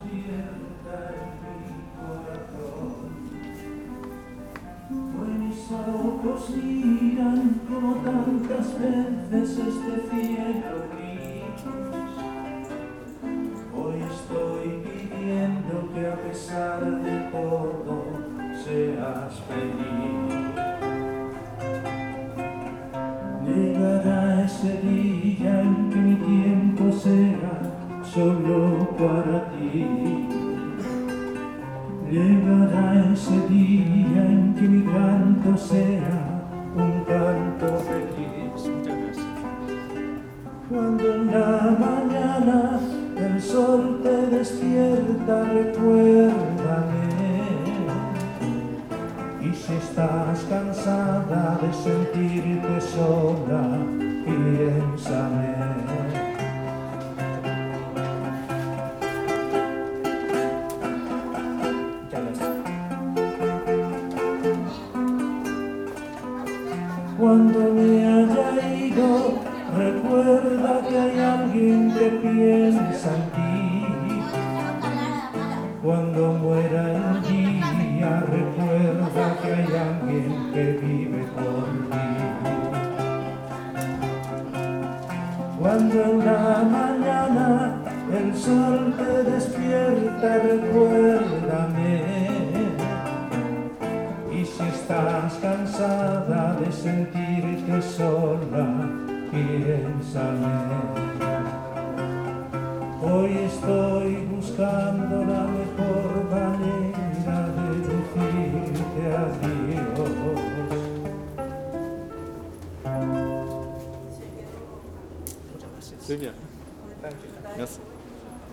Poble Sec, Barcelona, Espagne - station Paral-lel chanteur
chanteur dans couloir de métro